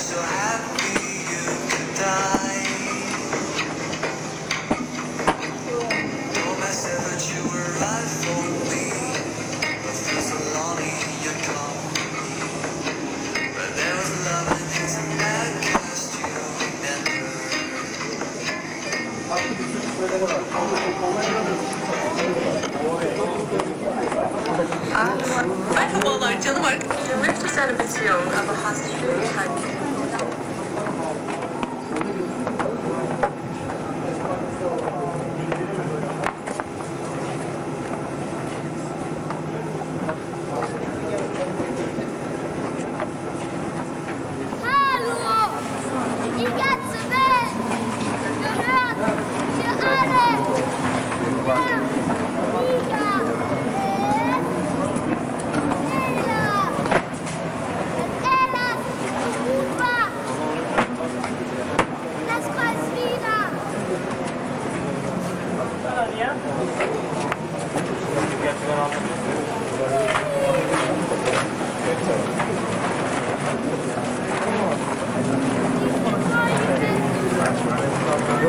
Ernst-Reuter-Platz, Monheim am Rhein, Deutschland - Listen Ernst Reuter Platz - excerpt of world listening day 22
A project in cooperation wth Radio Rakete - the internet radio of Sojus 7.
soundmap nrw - topographic field recordings and social ambiences